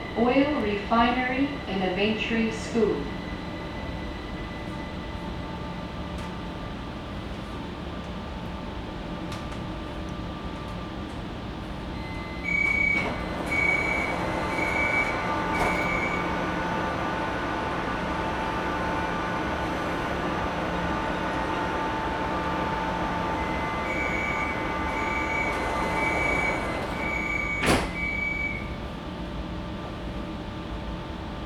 Nanzih District, Kaohsiung - Kaohsiung Mass Rapid Transit
from Houjing Station to Zuoying Station, Sony ECM-MS907, Sony Hi-MD MZ-RH1
楠梓區 (Nanzih), 高雄市 (Kaohsiung City), 中華民國, 29 March 2012, 15:59